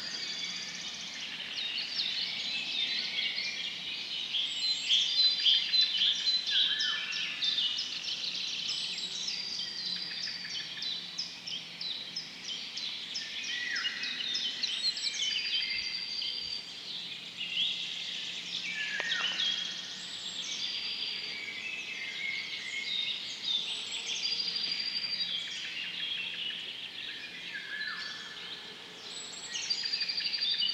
Sudeikiai, Lithuania, at Alausas lake
birds and everything at the lake
Utenos rajono savivaldybė, Utenos apskritis, Lietuva